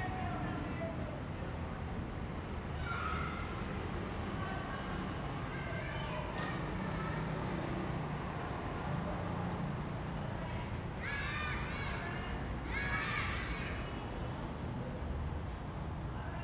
Sec., Beitou Rd., 北投區, Taipei City - Train travel through
Train travel through
Zoom H2n MS+XY